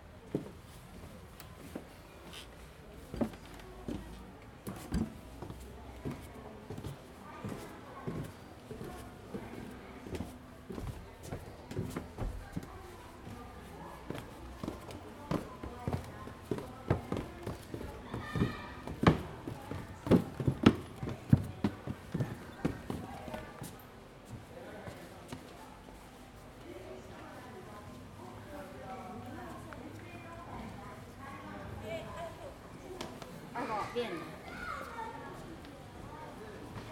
Provintia 赤崁樓 - Stepping on the wooden stairs
Visitors stepping on the wooden stairs and video playing. 遊客踩踏木製樓梯與介紹影片播放